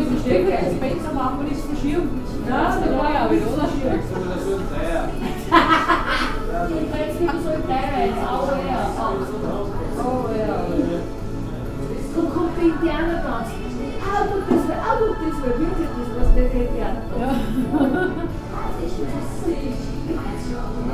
Linz, Österreich - hilde's treff
hilde's treff, rudolfstr. 24, 4040 linz